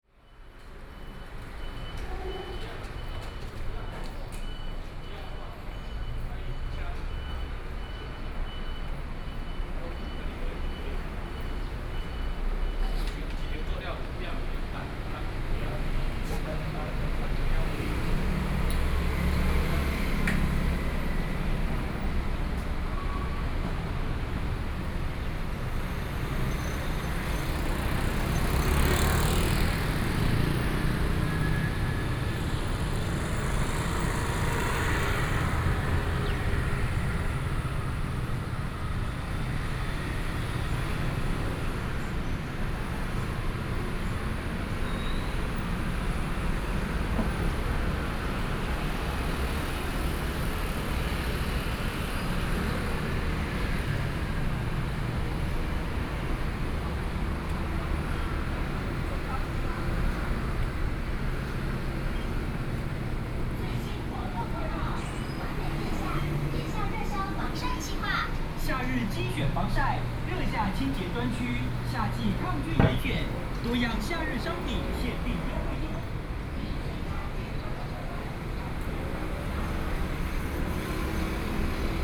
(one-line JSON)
{"title": "Gongzheng Rd., Luodong Township - walking on the Road", "date": "2014-07-28 08:49:00", "description": "walking on the Road, Various shops voices, Traffic Sound", "latitude": "24.68", "longitude": "121.77", "altitude": "17", "timezone": "Asia/Taipei"}